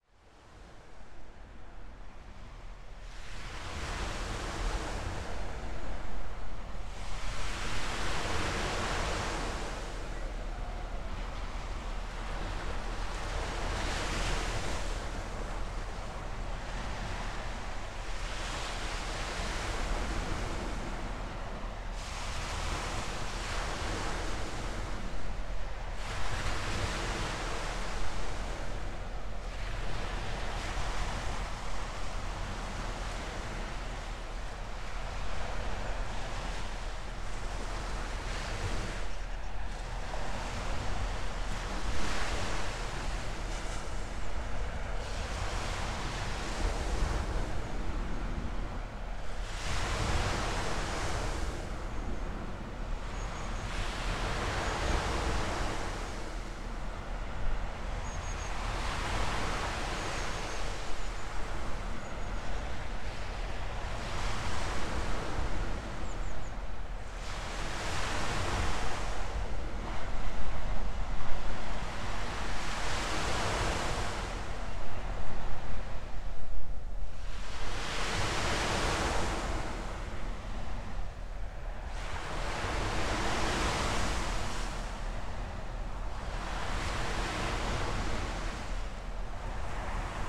listening to the sea from the remains of abandoned military fortification